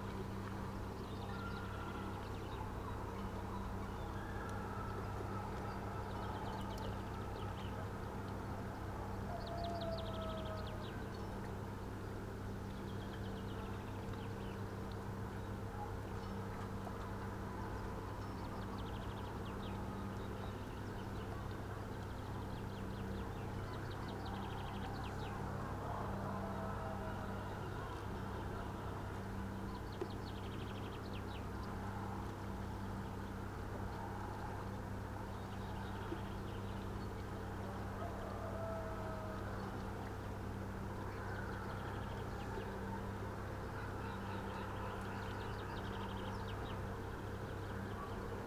window, Novigrad, Croatia - at dawn, window, poems
here borders between out- and inside are fluid ... who listens to whom?
18 July 2014, ~05:00